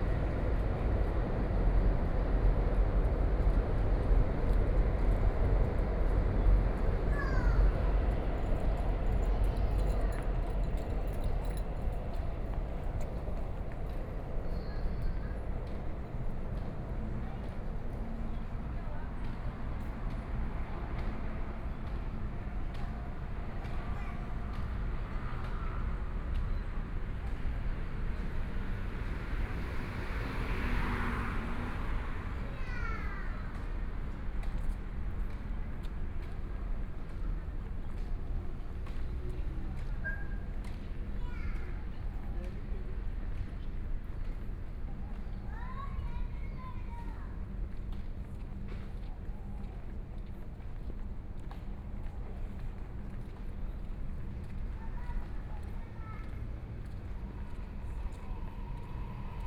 中山區集英里, Taipei City - walking in the Street
walking in the Street, MRT train sound